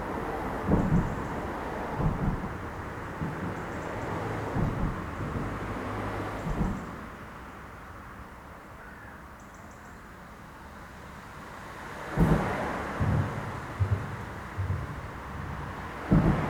{"title": "Ponte della Becca (PV), Italy - Under the bridge", "date": "2012-10-21 09:30:00", "description": "The sound of passing cars recorded close to one of the bridge pillars .", "latitude": "45.14", "longitude": "9.23", "altitude": "56", "timezone": "Europe/Rome"}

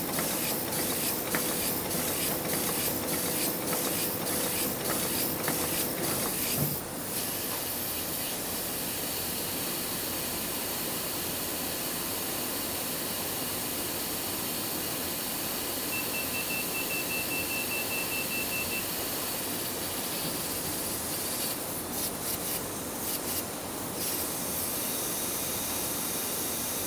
{"title": "Williams Press, Maidenhead, Windsor and Maidenhead, UK - The sound of the KNITSONIK Stranded Colourwork Sourcebook covers being printed", "date": "2014-10-02 14:23:00", "description": "This is the sound of the covers of the KNITSONIK Stranded Colourwork Sourcebook on the press at Williams Press, Berkshire. The sound was recorded with my EDIROL R-09 sitting underneath the out-tray of a giant Heidelberg Speedmaster.", "latitude": "51.53", "longitude": "-0.73", "altitude": "30", "timezone": "Europe/London"}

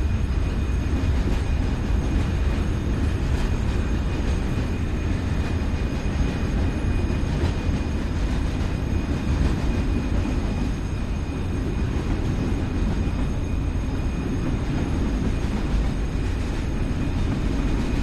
{"title": "Antwerpen, België - port of antwerp", "date": "2014-07-01 12:00:00", "description": "soundscape made with sounds of the port of antwerp\ncould be used to relax while listening\nrecordings where made between 1980 & 2015", "latitude": "51.30", "longitude": "4.32", "altitude": "5", "timezone": "Europe/Brussels"}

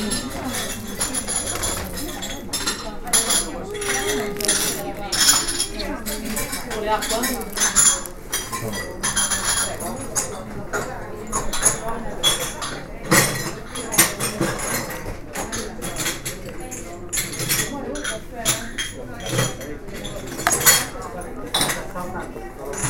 {"title": "Via Etnea, Catania. Caffè al bar (Romansound)", "description": "prendo un caffè al bar", "latitude": "37.51", "longitude": "15.09", "altitude": "30", "timezone": "Europe/Berlin"}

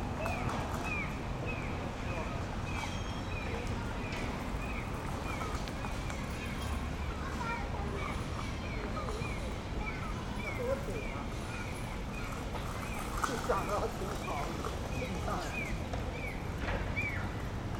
{"title": "Östermalm, Stockholm, Suecia - environment park", "date": "2016-08-01 23:17:00", "description": "Ambient sonor tranquil al parc.\nAmbient sound quiet park.\nAmbiente sonoro tranquilo en el parque.", "latitude": "59.34", "longitude": "18.07", "altitude": "23", "timezone": "Europe/Stockholm"}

